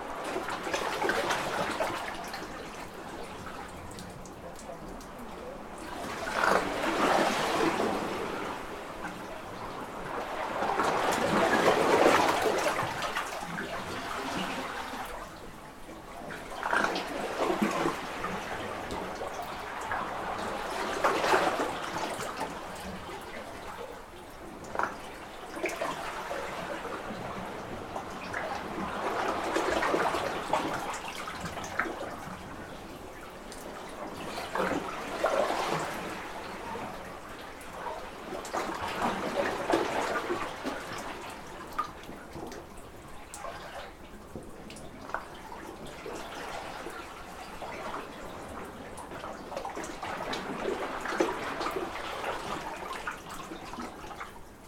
Coz-pors, Trégastel, France - Burping Water under a small cave [Coz-pors]
Les vagues font bloupbloup dans une petite cavité sous un rocher.
The waves are glougloubin a small cavity under a rock.
April 2019.
/Zoom h5 internal xy mic
France métropolitaine, France, April 2019